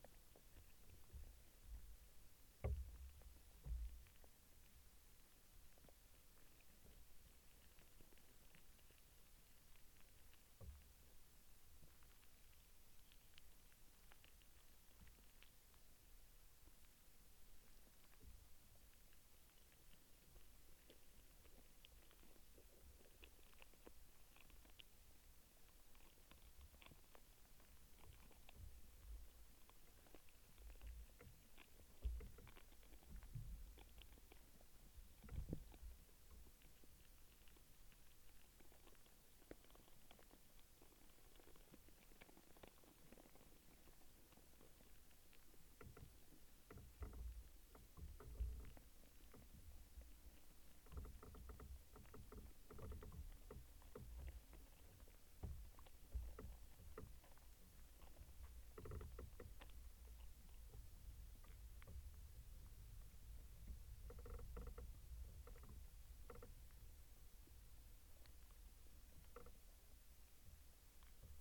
Thorndon, Eye, Suffolk UK - decaying tree
Ganderwick is a small patch of woodland which in Celtic times was a lake with ferry crossing. This woodland is a haven for wildlife amidst vast industrial monocrops. Here is a vast tree in decay busy with hidden life.
Stereo pair Jez Riley French contact microphones + SoundDevicesMixPre3